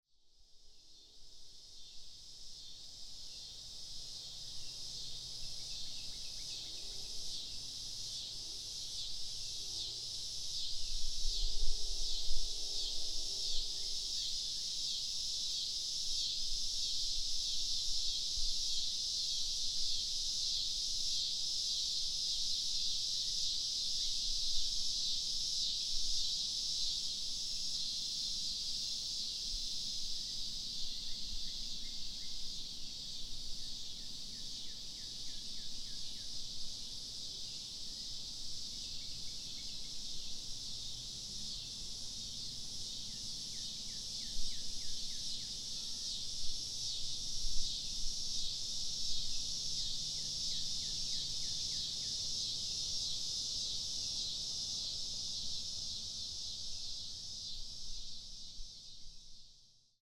{"title": "Meshingomesia Cemetery, N 600 W, Marion, IN, USA - Sounds heard at the Meshingomesia Cemetery, early evening", "date": "2020-07-23 20:42:00", "description": "Sounds heard at the Meshingomesia Cemetery (cicadas, train), early evening. Recorded using a Zoom H1n recorder. Part of an Indiana Arts in the Parks Soundscape workshop sponsored by the Indiana Arts Commission and the Indiana Department of Natural Resources.", "latitude": "40.64", "longitude": "-85.73", "altitude": "248", "timezone": "America/Indiana/Indianapolis"}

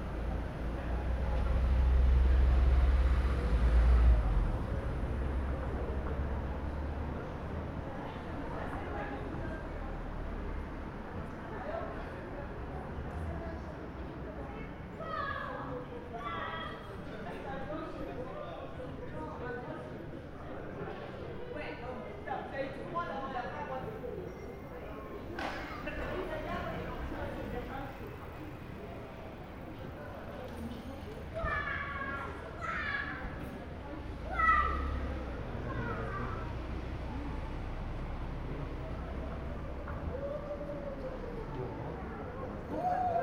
{"title": "Rue des Serruriers, Strasbourg, Frankreich - Hotel Gutenberg, outside the window, 2nd floor", "date": "2021-08-28 08:00:00", "description": "Street sounds recorded from the window sill on the second floor.", "latitude": "48.58", "longitude": "7.75", "altitude": "151", "timezone": "Europe/Paris"}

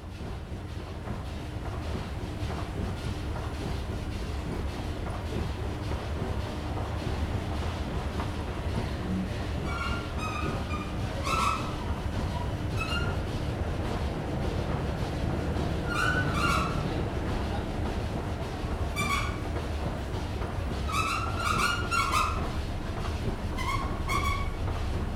{"title": "Poznan, Plaza shopping center, escalator from tram platform", "latitude": "52.44", "longitude": "16.92", "altitude": "87", "timezone": "Europe/Berlin"}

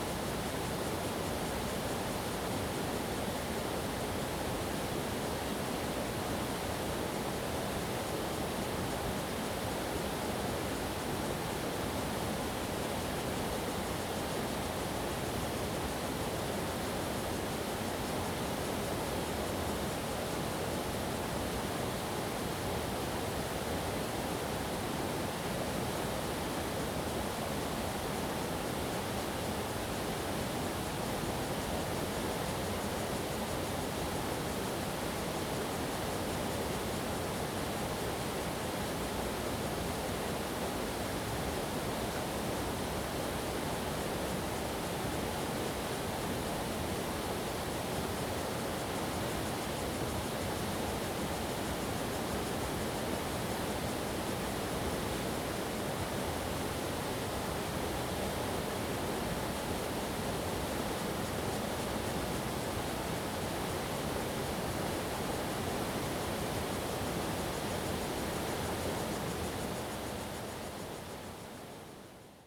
{"title": "南華村, Ji'an Township - Stream and Cicadas", "date": "2014-08-28 09:19:00", "description": "Stream of sound, Cicadas sound, Hot weather\nZoom H2n MS+XY", "latitude": "23.95", "longitude": "121.54", "altitude": "79", "timezone": "Asia/Taipei"}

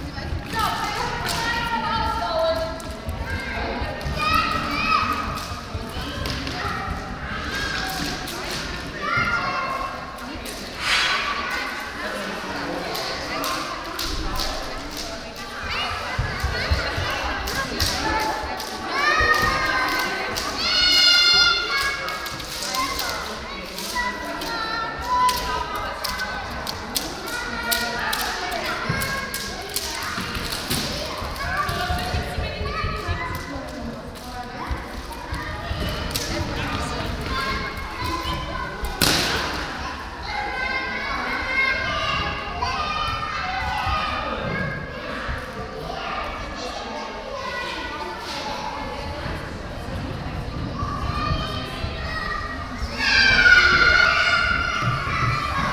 {"title": "cologne, steinstr, gym and dance studio for kids", "date": "2009-08-03 21:17:00", "description": "kids dance school, free play\nsoundmap nrw: social ambiences/ listen to the people in & outdoor topographic field recordings", "latitude": "50.93", "longitude": "6.95", "altitude": "48", "timezone": "Europe/Berlin"}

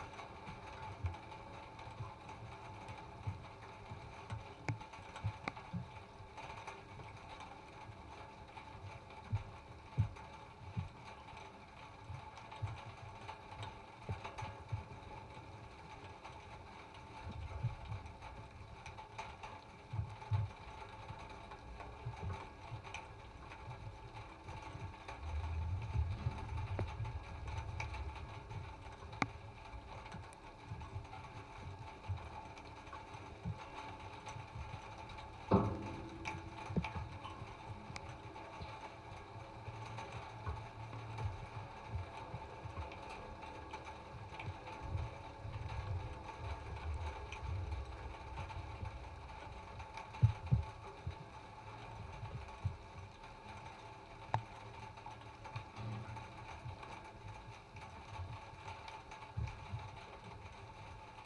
{"title": "Utena, Lithuania, abandoned hangar construction and rain", "date": "2019-07-30 16:00:00", "description": "small local aeroport. abandoned hangar. rain starts. contact mics on door's construction", "latitude": "55.49", "longitude": "25.72", "timezone": "GMT+1"}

